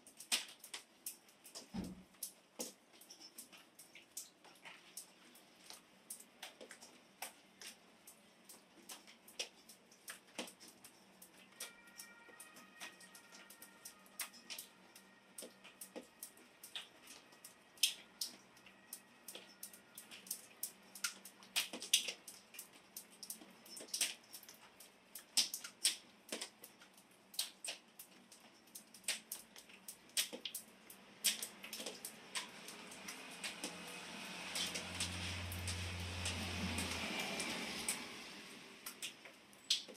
Wittekindstraße, Hameln, Germany DROPS OF MELTING SNOW & CITY SOUNDS (3D Ambisonics Audio) - DROPS OF MELTING SNOW & CITY SOUNDS (3D Ambisonics Audio)
DROPS OF MELTING SNOW & CITY SOUNDS (3D Ambisonics Audio).
Inside the recording is the ambulance car, when it was driving in the neighborhood and the car passing by in close distance from the mic stand. Drops of Melting Snow felt from the roof on the street just 2m away from the mic.
ZOOM H3-VR Ambisonics Microphone